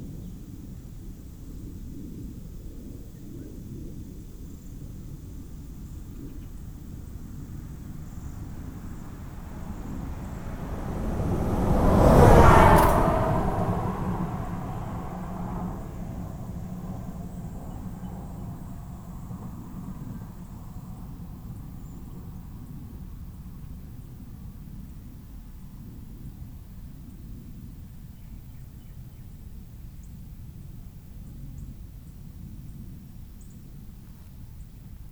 More sounds of birds, insects and cars, heard at the entrance to Grass Lake Sanctuary. The mixture here of natural and human-made vehicle sounds became a theme on this visit to GLS. The closeness of the everyday mechanical world highlights the need to preserve nature sanctuaries like GLS.
WLD, phonography, Grass Lake Sanctuary
Manchester, Michigan USA